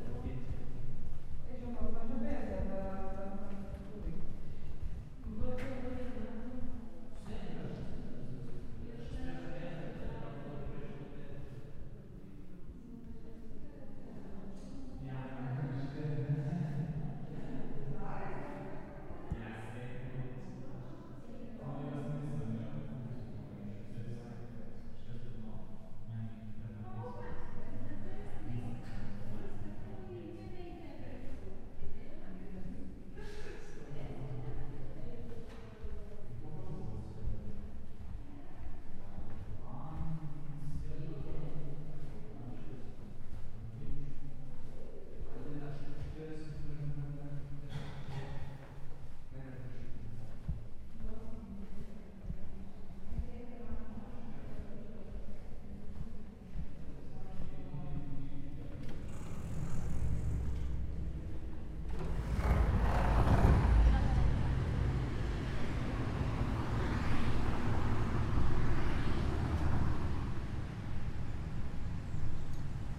{
  "title": "Srednja ekonomska in trgovska šola, Nova Gorica, Slovenija - Sprehod po srednji ekonomski in trgovski šoli",
  "date": "2017-06-07 12:09:00",
  "description": "Corridor small talk.\nSrednja ekonomska in trgovska šola Nova Gorica",
  "latitude": "45.96",
  "longitude": "13.64",
  "altitude": "94",
  "timezone": "Europe/Ljubljana"
}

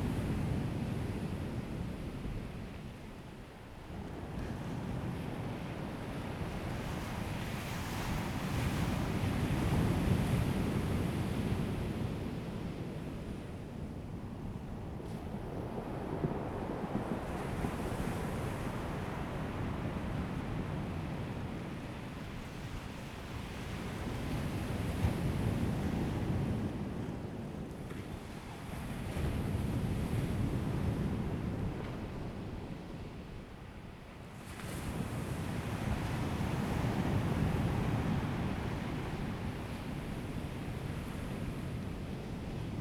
{
  "title": "南田村, Daren Township - Sound of the waves",
  "date": "2014-09-06 14:38:00",
  "description": "Sound of the waves, The weather is very hot\nZoom H2n MS +XY",
  "latitude": "22.26",
  "longitude": "120.89",
  "altitude": "5",
  "timezone": "Asia/Taipei"
}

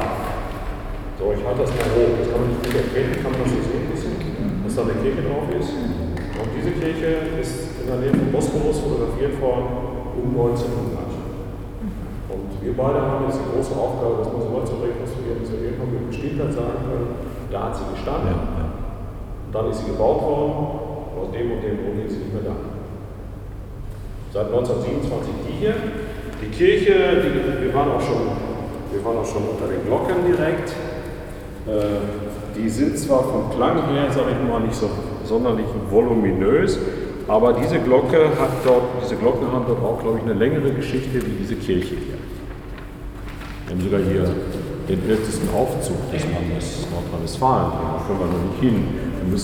{
  "title": "Chapel of the hospital, Hamm, Germany - In der Kapelle dea Marienhospitals...",
  "date": "2014-08-29 18:00:00",
  "description": "At the end of a guide tour to special places around the “Marienhospital”, Werner Reumke leads us to one of his favorite places in the Martin-Luther-Viertel, the chapel of the Hospital… he often comes here early in the morning, he says, musing over the fantastic colored light reflexes through the windows…\nAm Ende einer Führung zu besonderen Orten um das Marienhospital führt uns Werner Reumke an einen seiner Lieblingsorte im Martin-Luther-Viertel, die Kapelle des Krankenhauses…",
  "latitude": "51.68",
  "longitude": "7.82",
  "altitude": "65",
  "timezone": "Europe/Berlin"
}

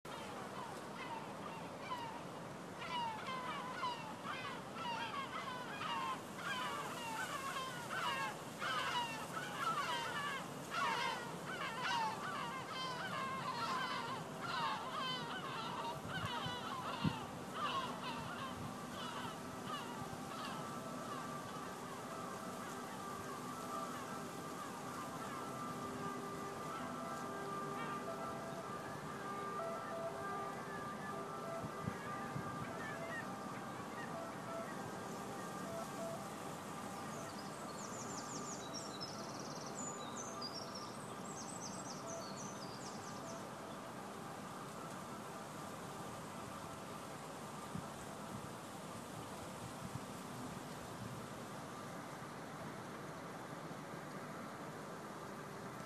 {
  "title": "Tiber River",
  "date": "2011-02-17 12:24:00",
  "description": "River. Streets are silenced by the river",
  "latitude": "41.87",
  "longitude": "12.48",
  "altitude": "12",
  "timezone": "Europe/Rome"
}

